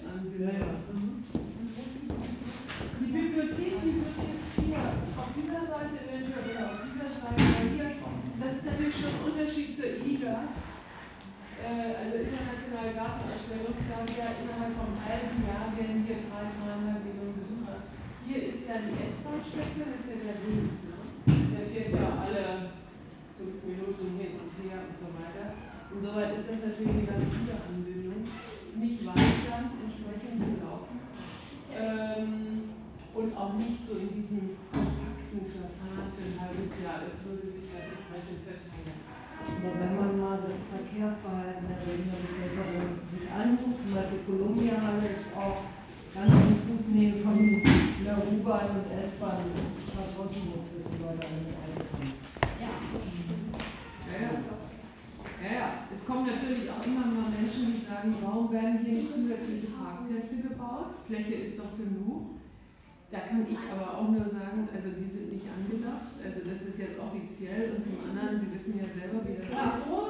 Infopoint, Tempelhofer Feld, Berlin - inside booth, talking
info stand, interesting mobile architecture made of wood and glass.
woman talks to a group of people about future and development of the Tempelhof area.